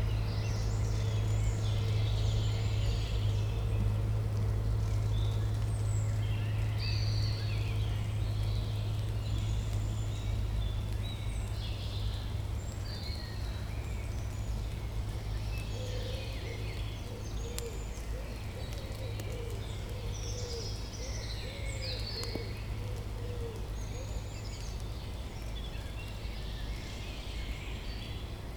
Schwäbisch Gmünd, Germany - Taubental Forest in the afternoon
12 May, ~16:00